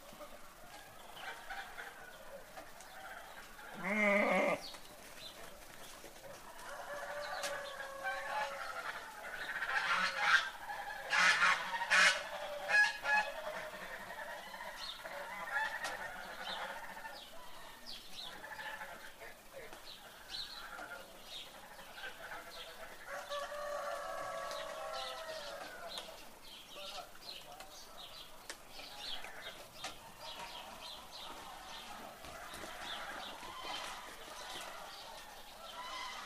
Largu, 01.Nov.2008 - 7:30am